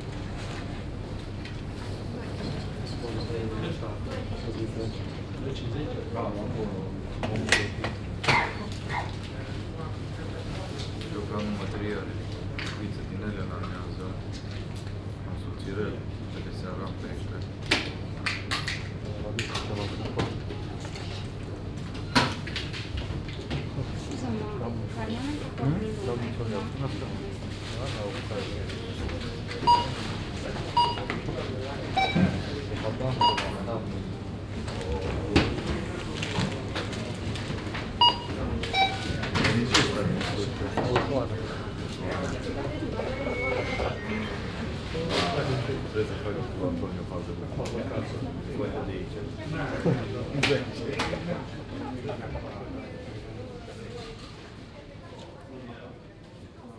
Old Town, Klausenburg, Rumänien - Cluj-Napoca - Centre Commercial Central - supermarktet checkout counter
At the check out counter of the supermarket inside the shopping mall.
The sound and two note rhythm melody of two check points and customer conversation.
soundmap Cluj- topographic field recordings and social ambiences